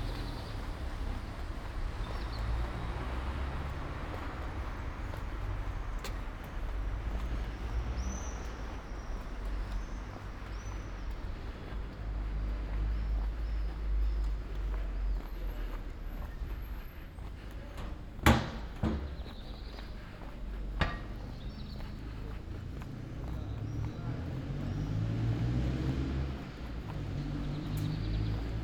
Ascolto il tuo cuore, città. I listen to your heart, city. Several chapters **SCROLL DOWN FOR ALL RECORDINGS** - Another morning far walk AR with break in the time of COVID19 Soundwalk
"Another morning far walk AR with break in the time of COVID19" Soundwalk
Chapter LXIX of Ascolto il tuo cuore, città. I listen to your heart, city
Thursday May 7th 2020. Walk to a borderline far destination: round trip. The two audio files are joined in a single file separated by a silence of 7 seconds.
first path: beginning at 7:40 a.m. end at 8:08 a.m., duration 28’14”
second path: beginning at 10:05 a.m. end al 10:41 a.m., duration 35’51”
Total duration of audio file: 01:04:13
As binaural recording is suggested headphones listening.
Both paths are associated with synchronized GPS track recorded in the (kmz, kml, gpx) files downloadable here:
first path:
second path: